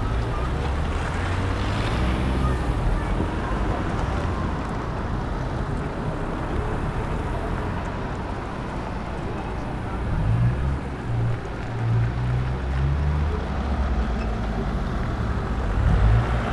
Berlin: Vermessungspunkt Maybachufer / Bürknerstraße - Klangvermessung Kreuzkölln ::: 28.12.2012 ::: 17:04